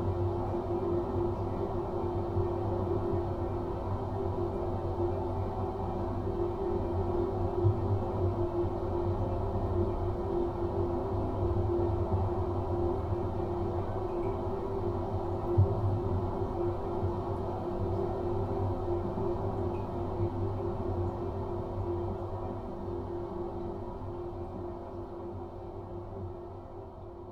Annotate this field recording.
Schlossweg - the shady, relatively traffic free path to the castle - has a succession of drains, where running water can always be heard resonating beneath your feet, each with a slightly different sound or pitch. This recording - the microphones are dangling though the drain grills - brings them together as one walks up the hill. Occasionally someone ride a bike over the top.